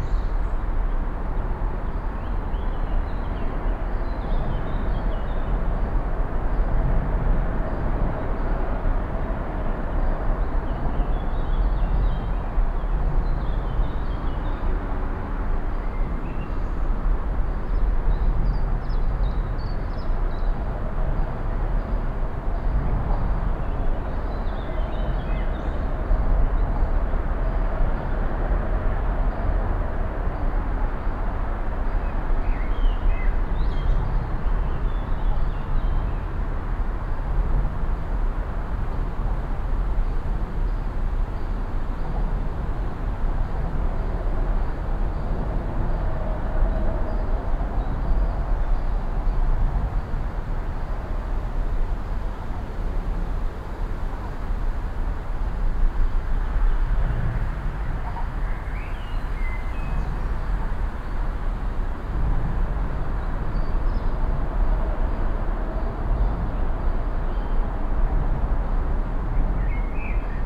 Directly under the bridge with lots of traffic, low frequency rumble from the maintenance chambers and gangways on the lower side of the bridge, audible expansion gaps, birds singing, wind in the trees, a jogger passing by
Binaural recording, Zoom F4 recorder, Soundman OKM II Klassik microphone with wind protection
Kiel, Germany, 24 May 2017, ~18:00